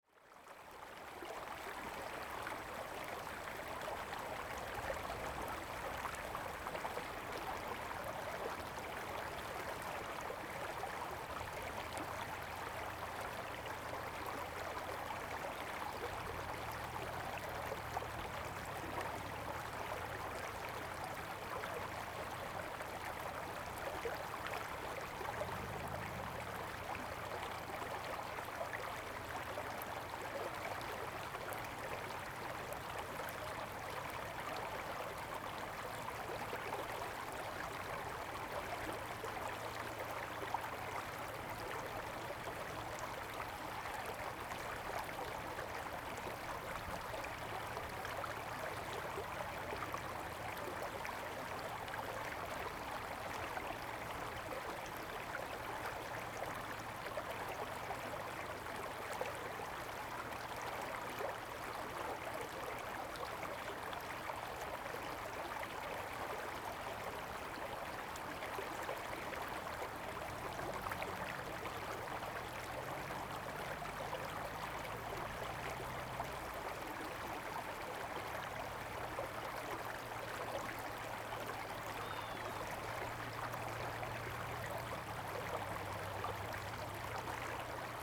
建農里, Taitung City - Streams
Streams, The weather is very hot
Zoom H2n MS +XY
Taitung County, Taiwan, 4 September 2014